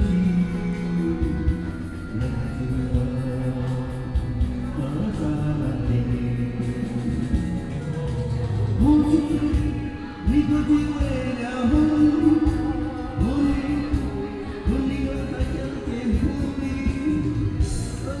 Karaoke, Traffic Sound, Small village
Sony PCM D50+ Soundman OKM II